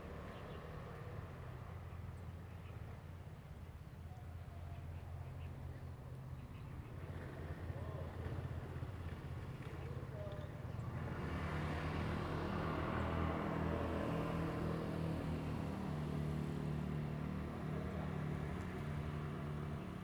海子口漁港, Hsiao Liouciou Island - Small fishing port
Birds singing, Chicken sounds, Small fishing port
Zoom H2n MS+XY